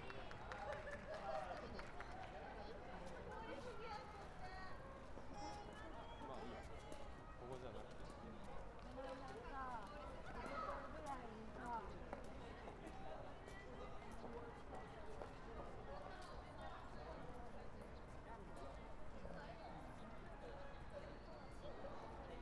Osaka, National Art Museum forecourt - conjurers
two conjurers performing and entertaining kids in front of National Art Museum and Museum of Technology.
近畿 (Kinki Region), 日本 (Japan)